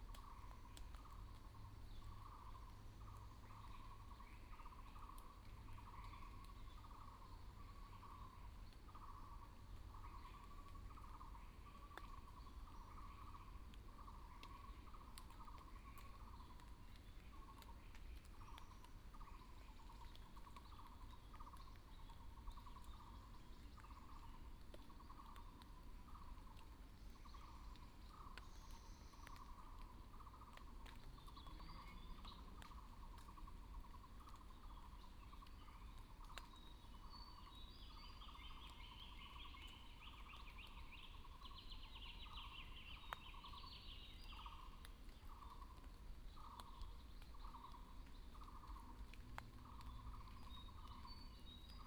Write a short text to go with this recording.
In the woods, Evaporation of moisture droplets, Bird sounds